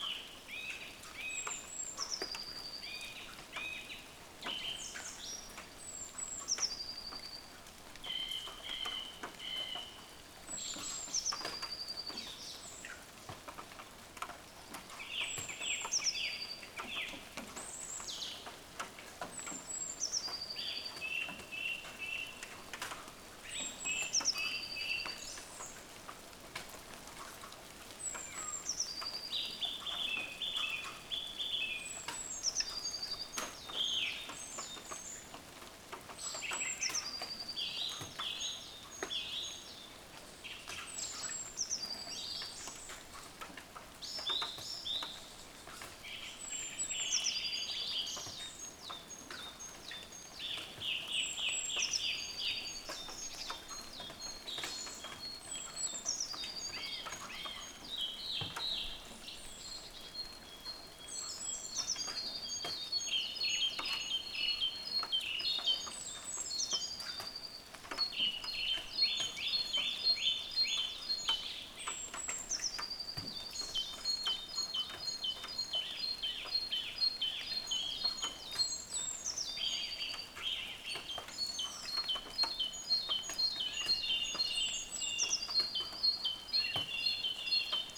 Nad Závěrkou, Praha, Czechia - Morning Rain and Birds from my Window
A dawn recording of birds and rain from my window overlooking a wooded park. The park is home to many doves, jays, magpies and numerous kinds of little birds I don’t know the names of.
Praha, Česká republika, 20 March 2020, 06:45